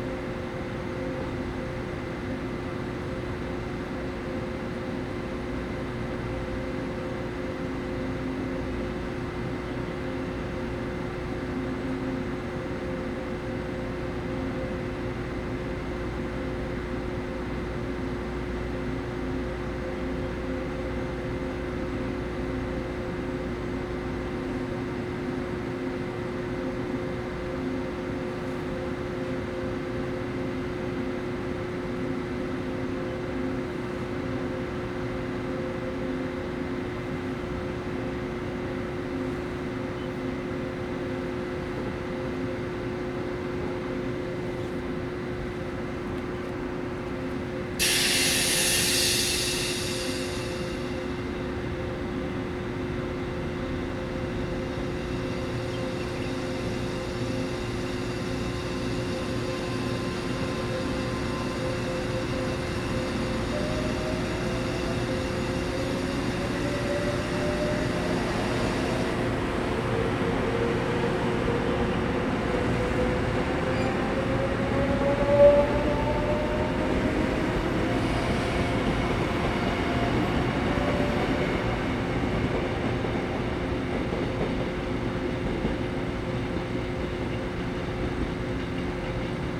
Fengshan Station - Beside the railway
Beside the railway, Train ready to go, Sony Hi-MD MZ-RH1, Rode NT4